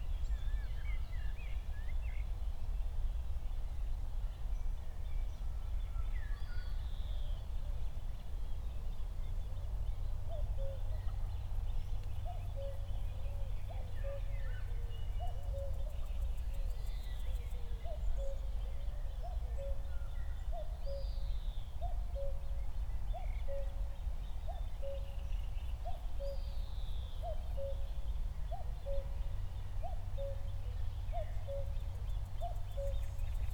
Berlin, Buch, Mittelbruch / Torfstich - wetland, nature reserve
10:00 Berlin, Buch, Mittelbruch / Torfstich 1